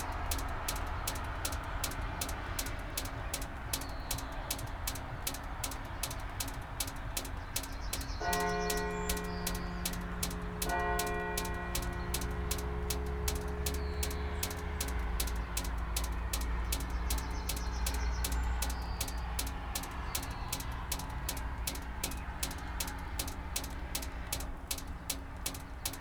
St.Lubentius, Dietkirchen - drain, drops, cars, bells
this remarkable and very old church, St.Lubentius, sits on a huge rock over the river Lahn. drops in a drain after a short rain, a few church bells, distant cars, unfortunately.
(Sony PCM D50, DPA4060)